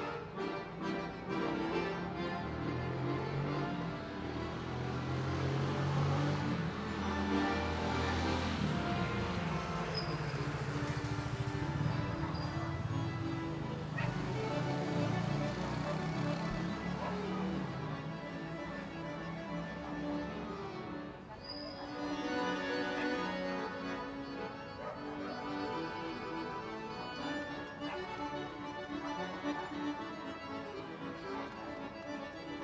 A pesar del clima enrarecido, los primeros días de la cuarentena venían acompañados de una especie de encanto popular. Diariamente, a eso de las 20hs, un vecino tocaba el bandoneón en su balcón. El sonido alcazaba a varias cuadras y sus habitantes/espectadores concurrían desde sus balcones/palcos. El espacio urbano se disponía momentáneamente como un recinto de espectáculos.
Comuna, Argentina, 21 March 2020